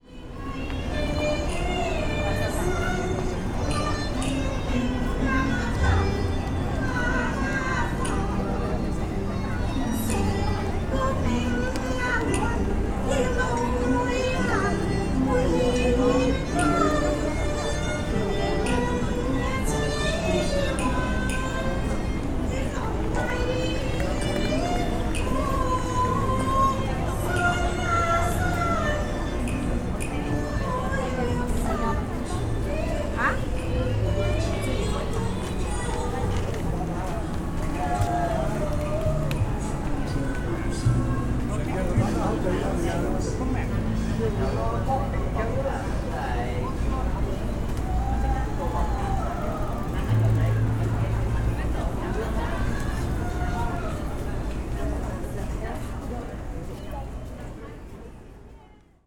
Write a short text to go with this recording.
equipment used: M-Audio MicroTrack II, Mid-Autumn Festival